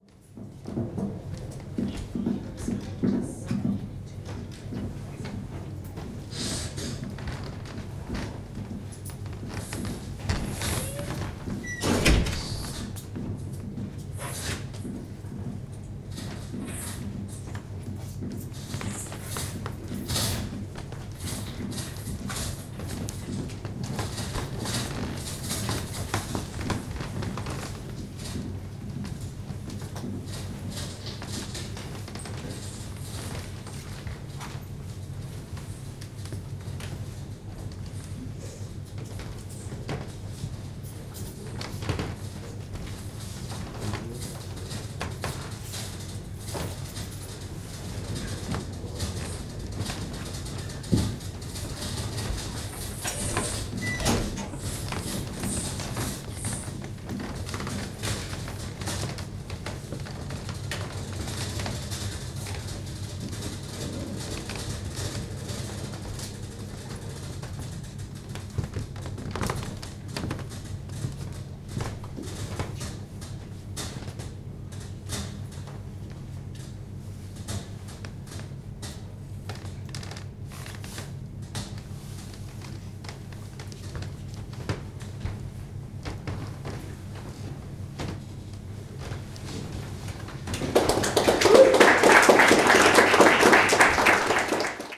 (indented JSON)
{
  "title": "Tallinn, Pikk, gallery",
  "date": "2011-04-17 20:50:00",
  "description": "concert at artspace, squeaking wooden floor, people coming in",
  "latitude": "59.44",
  "longitude": "24.75",
  "altitude": "29",
  "timezone": "Europe/Tallinn"
}